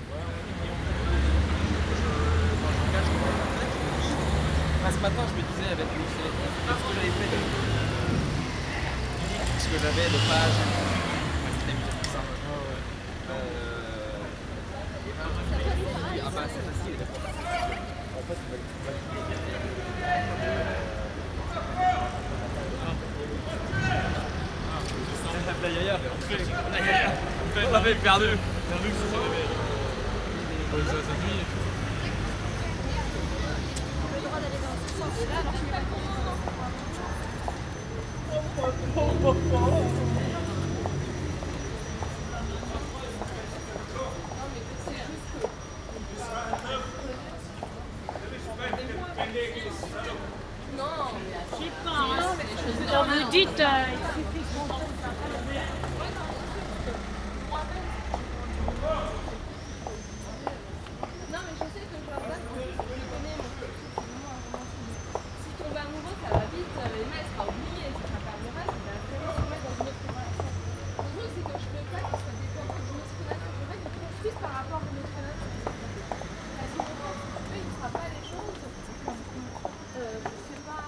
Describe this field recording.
Pedestrians on Rue du Roi de Sicile. Binaural recording.